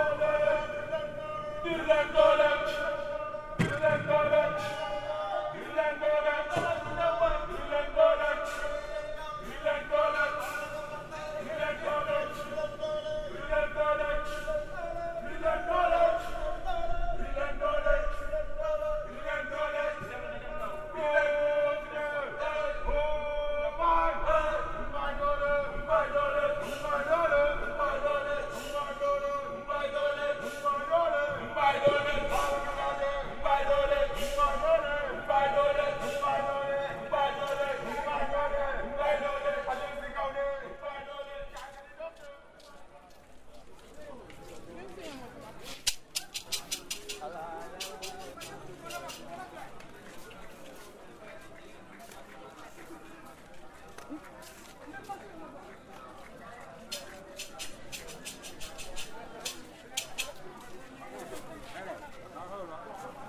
Created in 1880, Rufisque is a town of history and culture. With its rich architectural heritage, Rufisque
was and remains a fishing village.